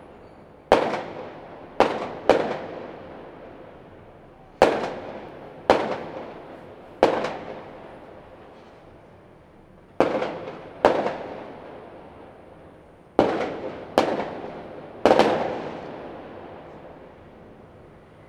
Rende 2nd Rd., 桃園市八德區 - Fireworks and firecrackers
Fireworks and firecrackers, traffic sound
Zoom H2n MS+XY
Taoyuan City, Taiwan, 1 March 2018, 21:57